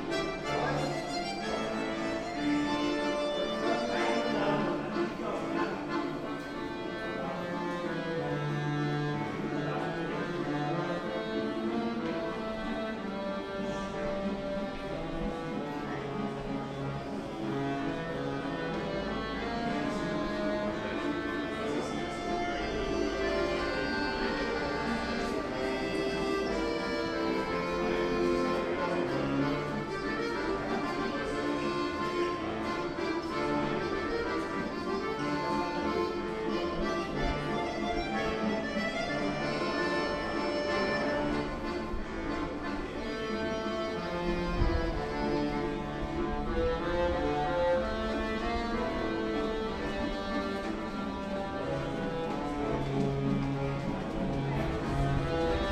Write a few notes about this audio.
Im Tunnel zwischen den U-Bahnen mit Akkordeon-Spieler, Zwischen der U6 und der U2 gibt es einen langen niedrigen Tunnel: eine Gruppe von Jugendlichen, die sich lautstark unterhält. Am Ende des Tunnels ein Akkordeon Spieler - er beginnt mit Schostakowitschs Walzer Nr. 2 - Ich bleibe in seiner Nähe, befinde mich eine halbe Treppe über ihm. Menschen gehen die Treppen rauf und runter. Eine U-Bahn fährt ein und wieder ab. Ich entferne mich langsam vom Spieler und komme nochmal an der Gruppe der Jugendlichen vorbei. Between the U6 and U2 there is a long, low tunnel: a group of young people who talks loudly. At the end of the tunnel an accordion player - he begins with Shostakovich's Waltz No. 2. I stay close to him, half-staircase above him. People walk the stairs up and down. The subway arrives and departs. I leave slowly the player. pass again by the group of young people.